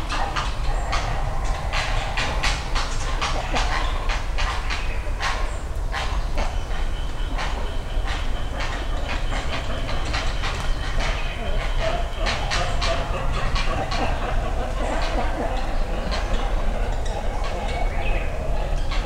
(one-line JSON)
{"title": "Woods along Yasugawa in Hayashi, Rittō-shi, Shiga-ken, Japan - Great Cormorants Nesting", "date": "2018-04-21 12:58:00", "description": "Great Cormorants (カワウ) nesting high in trees along Yasugawa (river) in Rittō City, Shiga Prefecture, Japan. We can also hear great egrets nesting nearby, as well other birds and some human activity.\nThis recording was made with a Sony PCM-M10 recorder and a pair of small omnidirectional mics tied to a tree. Post-processing with Audacity on Fedora Linux included only trimming and fade-in/fade-out (no compression or EQ).", "latitude": "35.04", "longitude": "136.02", "altitude": "122", "timezone": "Asia/Tokyo"}